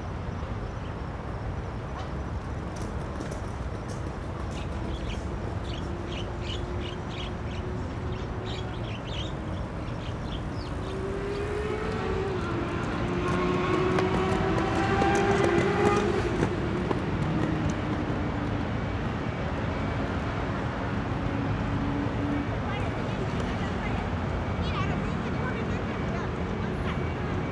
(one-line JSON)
{"title": "光復賞鳥綠地, Banqiao Dist., New Taipei City - Child and mother", "date": "2012-01-19 14:51:00", "description": "In Riverside Park, Child and mother, Children are learning to roller blading, Traffic Sound, Firecrackers\nZoom H4n+Rode NT4", "latitude": "25.03", "longitude": "121.48", "altitude": "4", "timezone": "Asia/Taipei"}